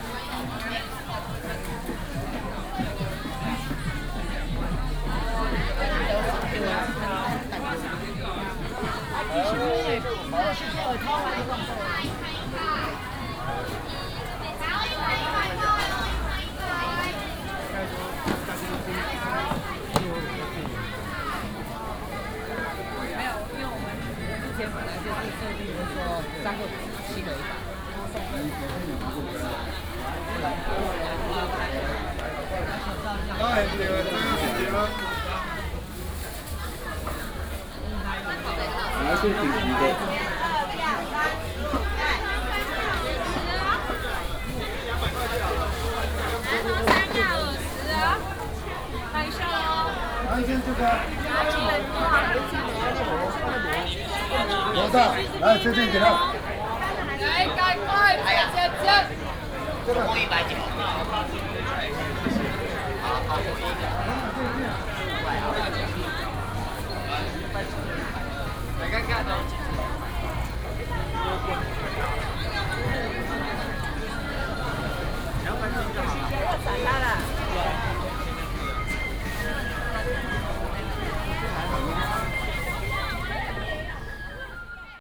Minsheng St., Hukou Township - vendors selling voice
All kinds of vendors selling voice, walking In the Market